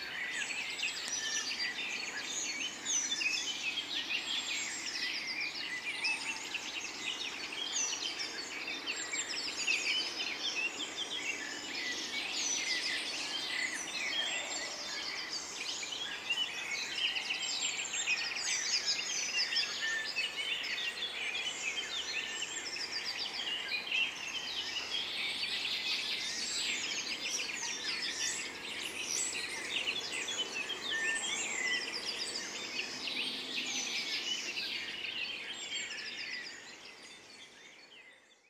Badegasse, Bad Berka, Deutschland - Beneath the Park Floor
*Stereophony AB (length 365mm)
Varied bird vocalizations, drones of cars and aircraft.
Recording and monitoring gear: Zoom F4 Field Recorder, RODE M5 MP, AKG K 240 MkII / DT 1990 PRO.
Thüringen, Deutschland, 9 May 2020, ~15:00